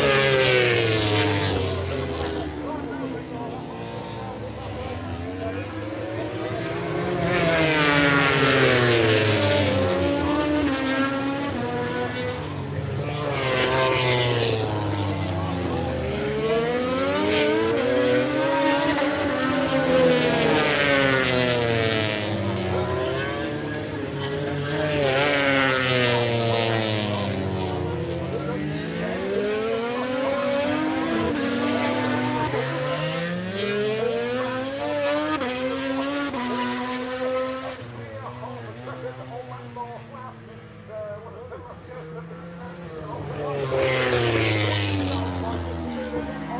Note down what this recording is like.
british motorcycle grand prix 2007 ... motogp race ... one point stereo mic to minidisk ...